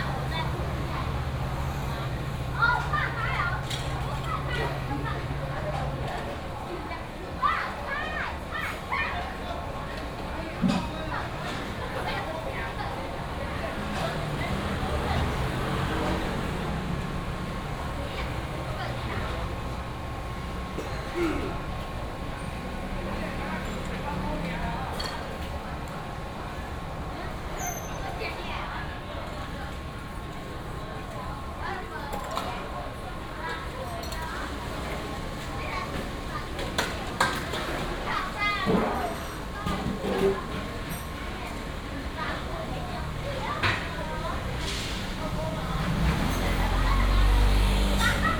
蔡家沙茶羊肉, Datong Dist., Taipei City - Outside the restaurant
Outside the restaurant, Traffic sound, Wash the dishes